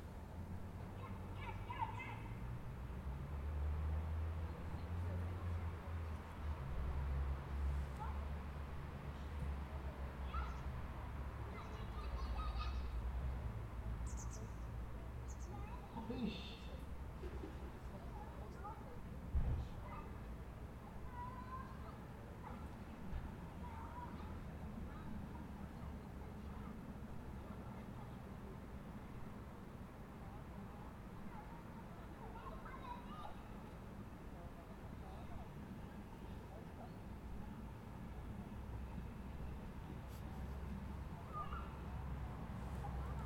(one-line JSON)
{"title": "Marzili, zum abtrocknen - Marzili, hier Trocknen, zum abtröchne", "date": "2011-10-20 18:39:00", "description": "Jetzt Kunst 2011, Projekt maboart zum abtröchne, eine Klangcollage als Nachklang an den Sommer", "latitude": "46.94", "longitude": "7.45", "altitude": "503", "timezone": "Europe/Zurich"}